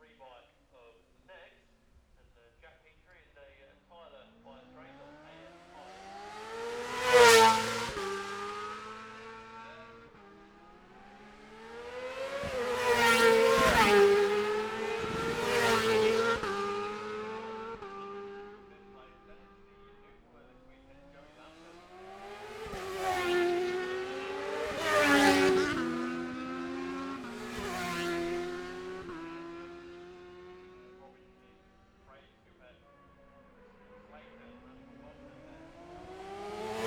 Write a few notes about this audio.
the steve henshaw gold cup ... 600 group one and group two practice ... dpa 4060s on t-bar on tripod to zoom f6 ...